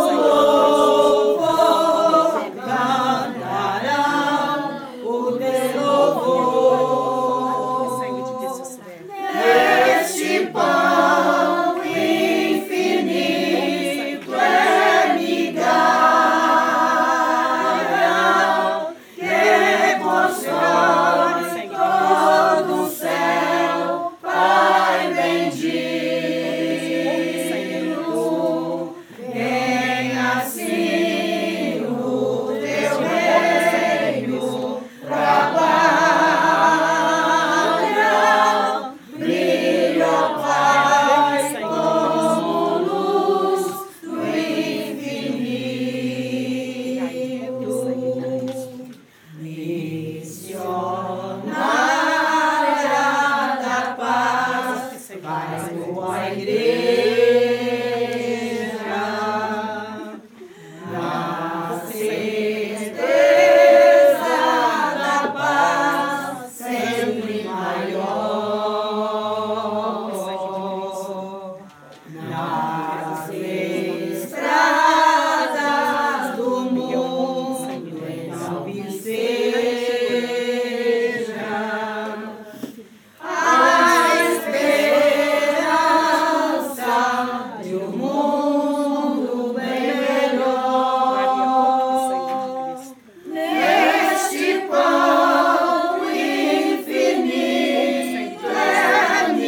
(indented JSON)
{
  "title": "Tangara, MG, Brasil - Mass for Sao Sebastian, during the night, outisde",
  "date": "2019-01-18 20:00:00",
  "description": "Mass for Sao Sebastian, outside during the night, in the countryside of Minas Gerais (Brasil). A group of 30 people (approx.) praying and singing for the celebration of Sao Sebastian during the night of 18th of January 2019 in the Tangara Community in Minas Gerais (Brasil).\nRecorded by an Ambeo Smart Headset by Sennheiser\nGPS: -20.1160861, -43.7318028",
  "latitude": "-20.12",
  "longitude": "-43.73",
  "altitude": "1045",
  "timezone": "America/Sao_Paulo"
}